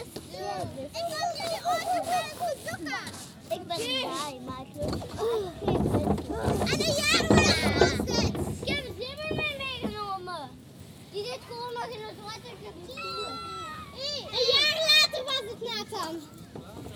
Cadzand, Nederlands - Children playing in a boat
During a very sunny sunday afternoon, children playing in a big pirates boat.